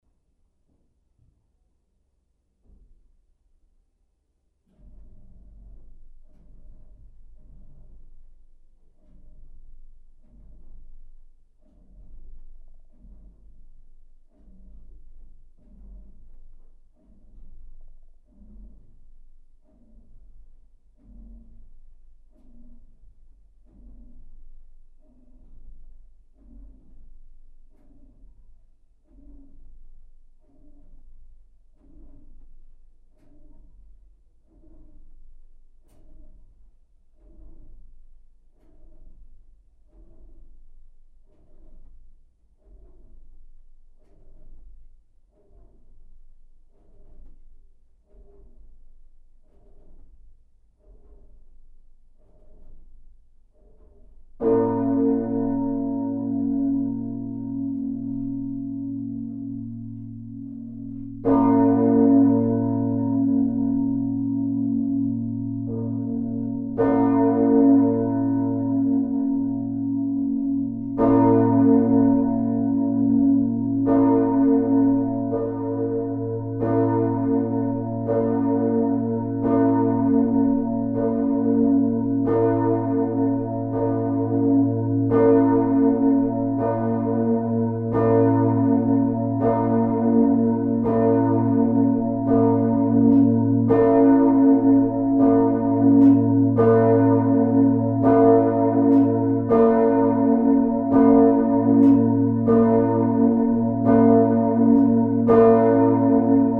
The Brugge bells in the Onze-Lieve-Vrouwkerk. Recorded inside the tower with Tim Martens and Thierry Pauwels.
This is the solo of the biggest bell.
Mariastraat, Brugge, Belgium, 24 August 2014